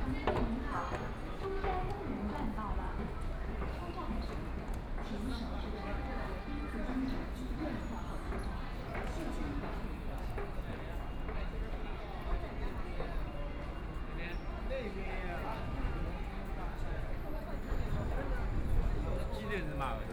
Zhongshan Park Station, Shanghai - walking into Station
From the mall to the subway station, Train stops, Voice message broadcasting station, Trains traveling through, Binaural recording, Zoom H6+ Soundman OKM II